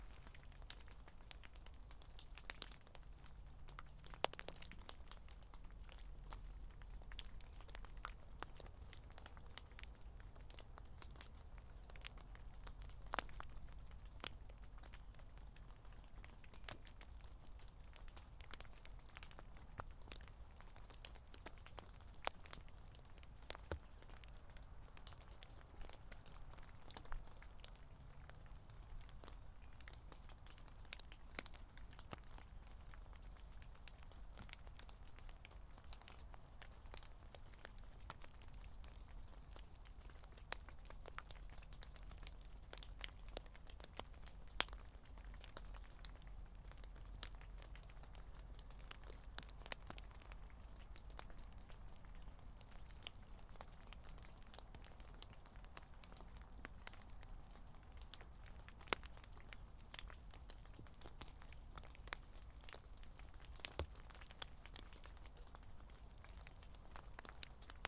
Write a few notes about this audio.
Following a misty, drizzly morning recorded rain drops falling from an Oak tree onto damp ground. Some higher pitched sounds are drops falling onto a metal gate. Tascam DR-05 built-in microphone.